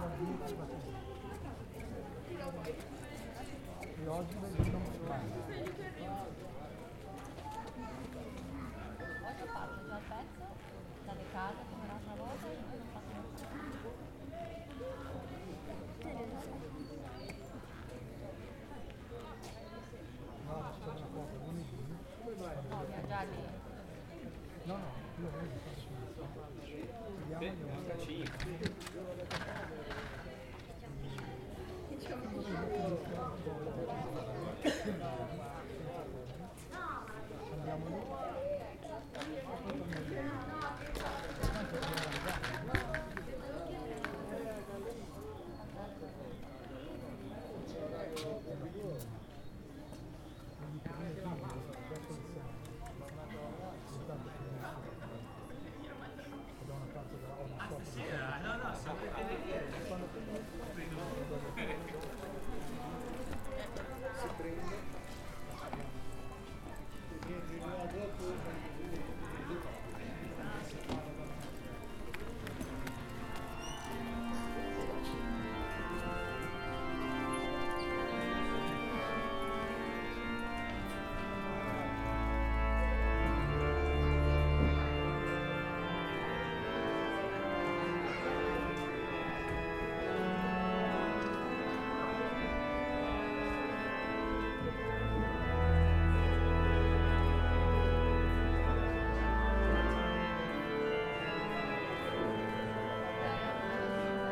Camogli, Genua, Italien - Orgelspiel und ein Kommen und Gehen
Die Messe beginnt, das Leben auf dem Kirchplatz nimmt seinen Lauf. Am Kircheneingang herrscht ein Kommen und Gehen. Die unvermeindliche Vespa bildet den Schluss der himmlischen Klänge.
San Rocco Genoa, Italy, 30 March 2014